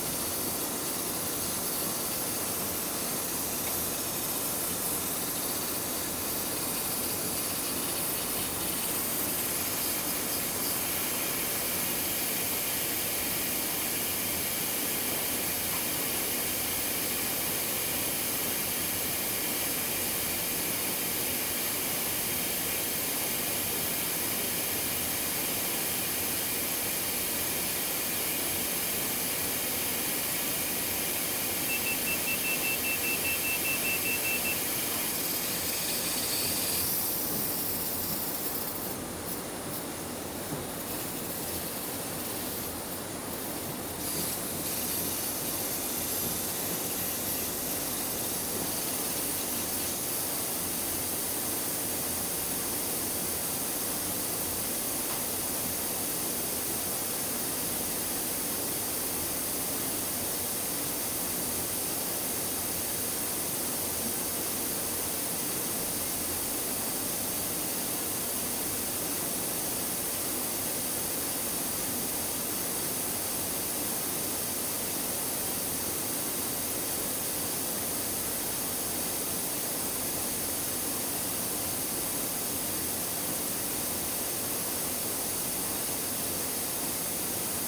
Williams Press, Maidenhead, Windsor and Maidenhead, UK - The sound of my book covers being printed
This is a longer recording of the Heidelberg Speedmaster printing 2,000 covers for my book, The KNITSONIK Stranded Colourwork Sourcebook.
October 2014